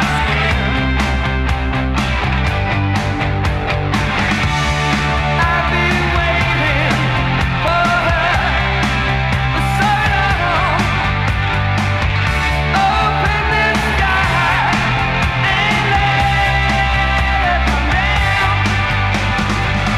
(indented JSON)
{
  "title": "Innstraße, Innsbruck, Österreich - fm vogel NABU SPEZIAL",
  "date": "2017-06-01 17:00:00",
  "description": "vogelweide, waltherpark, st. Nikolaus, mariahilf, innsbruck, stadtpotentiale 2017, bird lab, mapping waltherpark realities, kulturverein vogelweide, nabu records, robi, fm vogel, radio freirad",
  "latitude": "47.27",
  "longitude": "11.39",
  "altitude": "577",
  "timezone": "Europe/Vienna"
}